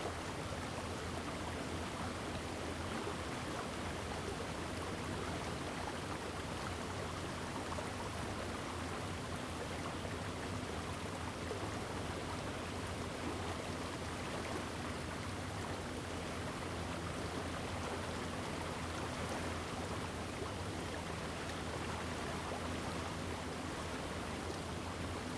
初英親水生態公園, Ji'an Township - Small streams
Very Hot weather, Small streams
Zoom H2n MS+XY